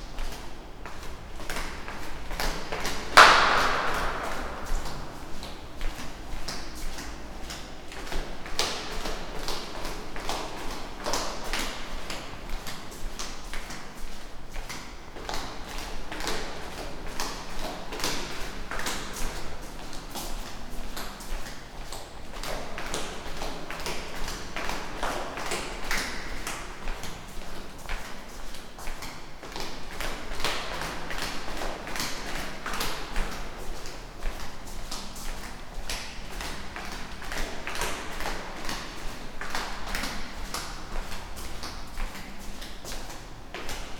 Slovenska ulica, Maribor, Slovenia - stairs, descending, steps